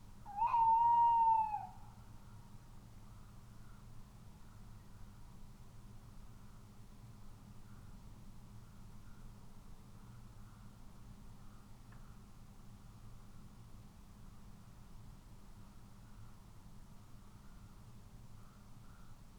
tawny owl calling ... xlr mics in a SASS on tripod to Zoom H5 ... bird calls then is quiet ... calls at 2:28 ... then regularly every minute ... ish ... contact call for the female ... or boundary call to show territory ..? no idea ...